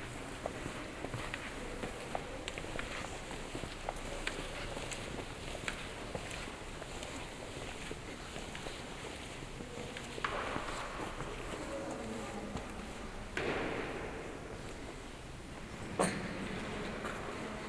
Schwäbisch Gmünd, Deutschland - Walk around the Münster
A walk around the "Münster" in Schwäbisch Gmünd, which is a big church.